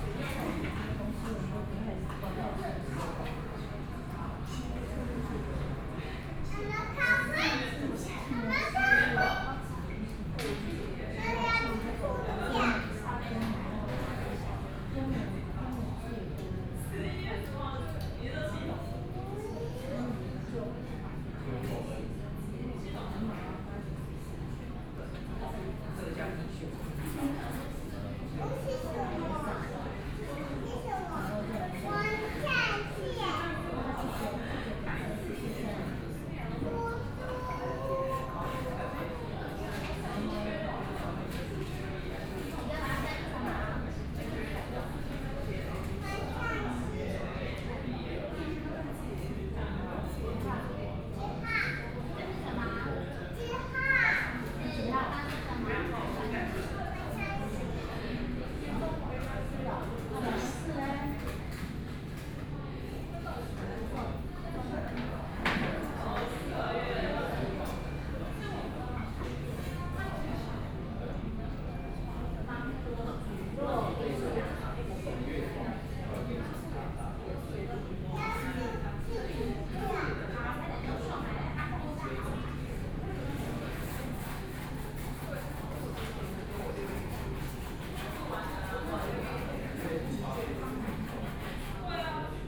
MOS BURGER, Jungli City - Child with mom
Child with mom, In the fast food inside, voice conversation, Sony PCM D50 + Soundman OKM II
Taoyuan County, Taiwan, 16 September 2013